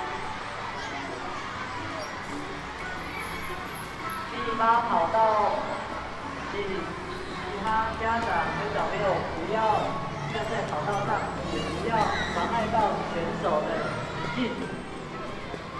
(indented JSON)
{
  "title": "Taiwan, Taichung City, Dali District, 長榮里 - Sports Day",
  "date": "2007-12-30 12:01:00",
  "description": "Recorded with a Zoom H2 Handy Recorder from the street on sports day at Yiming Elementary School, December 2007.",
  "latitude": "24.11",
  "longitude": "120.69",
  "altitude": "58",
  "timezone": "Asia/Taipei"
}